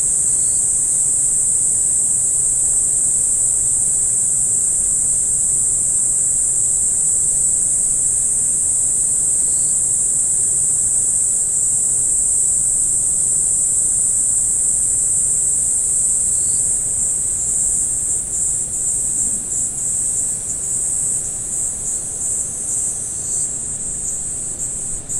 Omifuji, Yasu City, Shiga Prefecture, Japan - Cicada
A lone cicada singing in a Japanese cherry tree along a path beside a small river. The high-frequency sound of the cicada can be heard over the rumble of a waterfall and some human sounds. (WLD 2017)